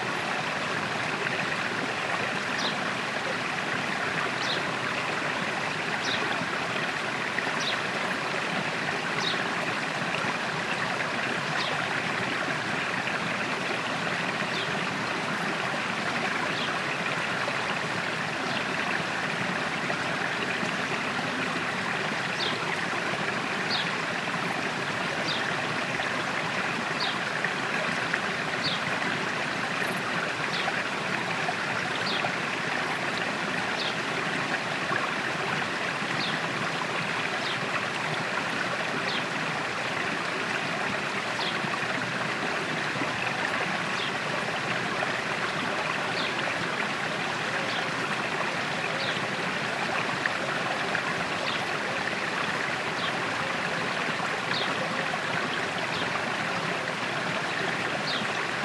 Aveize, France - Small river and birds
Small river is quite for the season.
Tech Note : Sony PCM-D100 internal microphones, wide position.